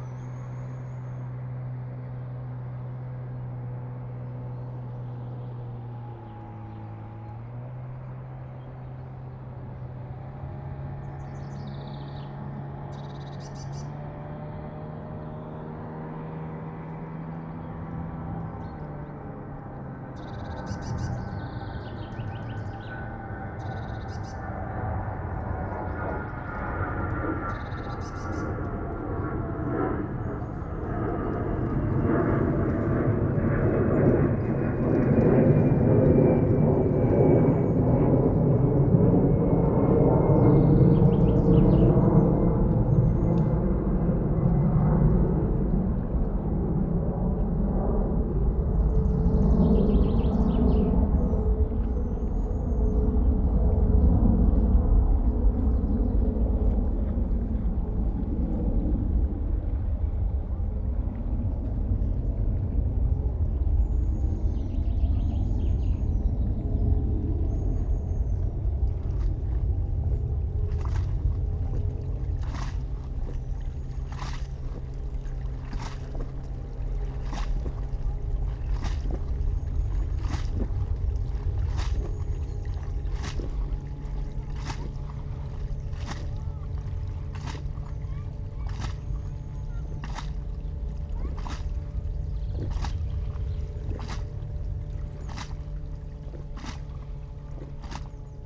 {"title": "Große Krampe, near Müggelheim, Berlin - boat, aircraft, dog and kid", "date": "2014-03-30 12:35:00", "description": "I've never been here before, though it's close. I've seen the landscape while departing with a plane from the nearby Berlin Schönefeld airport. It was a bright early spring morning, the view from above on these patches of forests, lakes and river-side areas was promising, deep and and touching. So I went there, and found it. And it was a sonic disappointment. Even on Sundays there's no quietness, planes lift of frequently, a carpet of noise lies over the land, the deep rumbling of engines can always be heard, long after the planes moved ahead, taking me away, on this bright day in early spring.\nA motor boat is passing by, a dog competes with its echo, an aircraft is heading south.\n(SD702, NT1A)", "latitude": "52.40", "longitude": "13.65", "altitude": "39", "timezone": "Europe/Berlin"}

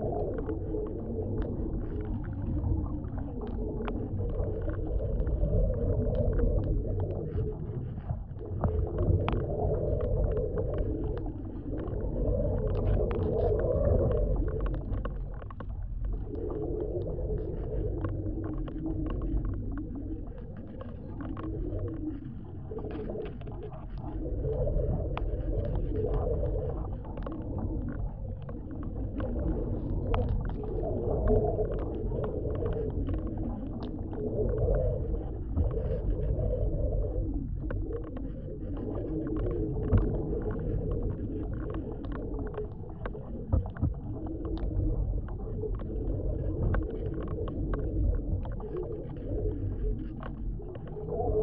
{"title": "Wreck Beach Reeds - Windy Reeds", "date": "2017-02-26 16:00:00", "description": "After descending the endless steps downward and onto the beach I turned left and walked behind the sand towards a stand of Bull Reeds dancing in the wind.\nRecording is made using 2 Contact Microphones, one attached to a seperate stalk to reed.\nWhat you can hear is the internal drone of the wind passing (playing ?) through the reed. The scratching is various reeds rubbing against eachother.", "latitude": "49.26", "longitude": "-123.26", "timezone": "America/Vancouver"}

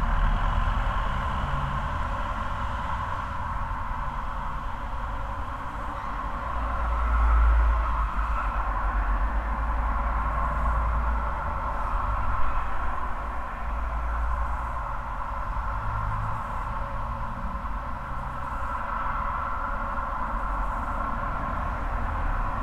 {"title": "Varžupio g., Akademija, Lithuania - Drainage pipe by the roadside", "date": "2019-08-23 15:00:00", "description": "Composite stereo field and dual contact microphone recording of a drainage pipe by the side of a road. Ambience, wind and traffic sounds, combined with resonant drone of the drain pipe. Recorded with ZOOM H5.", "latitude": "54.89", "longitude": "23.81", "altitude": "78", "timezone": "Europe/Vilnius"}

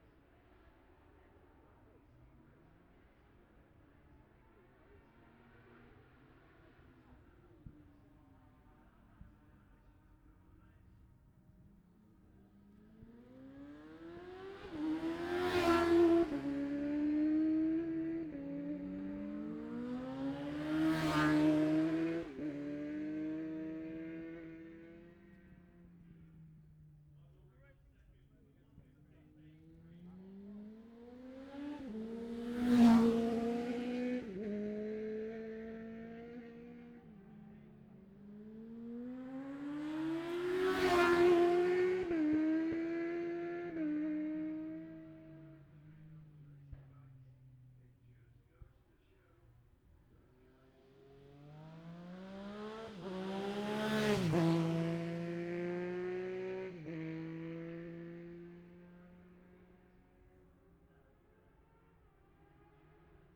{"title": "Jacksons Ln, Scarborough, UK - olivers mount road racing 2021 ...", "date": "2021-05-22 12:57:00", "description": "bob smith spring cup ... classic superbikes qualifying ... luhd pm-01 mics to zoom h5 ...", "latitude": "54.27", "longitude": "-0.41", "altitude": "144", "timezone": "Europe/London"}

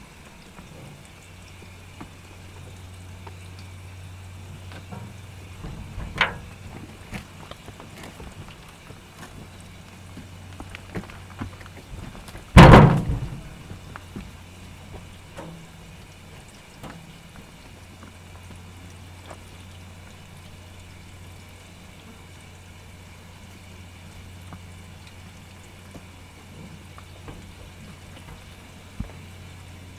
{"title": "Chem. des Tigneux, Chindrieux, France - Abreuvoir à vaches", "date": "2022-09-04 18:40:00", "description": "Il fait 31° les vaches viennent se désaltérer à l'abreuvoir constitué d'une ancienne baignoire, percussions avec les oreilles sur les parois, elles se bousculent pour avoir une place.", "latitude": "45.82", "longitude": "5.86", "altitude": "343", "timezone": "Europe/Paris"}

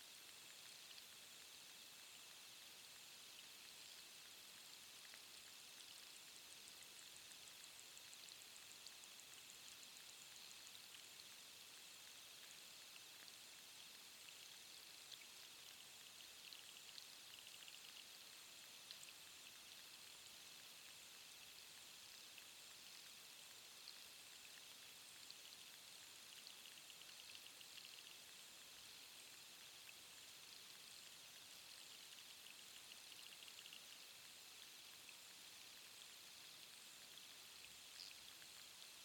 {"title": "Hydrophone in newt pond, Battery Townsend Headlands", "description": "small sounds recorded with a homemade hydrophone in Battery Townsend", "latitude": "37.84", "longitude": "-122.54", "altitude": "110", "timezone": "Europe/Tallinn"}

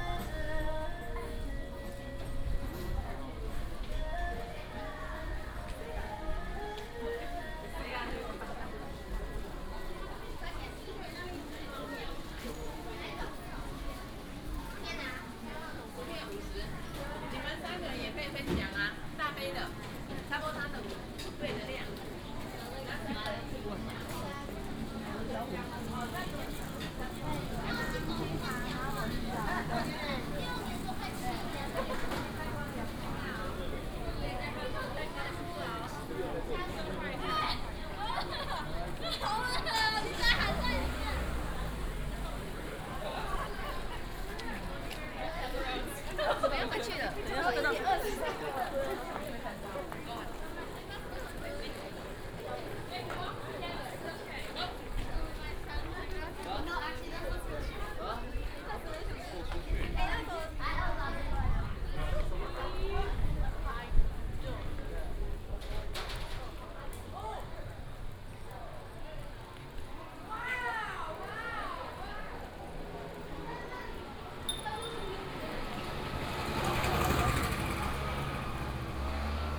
{"title": "Zhongzheng Rd., Hengshan Township - Sightseeing Street", "date": "2017-01-17 12:42:00", "description": "Sightseeing Street, Many students", "latitude": "24.71", "longitude": "121.18", "altitude": "253", "timezone": "GMT+1"}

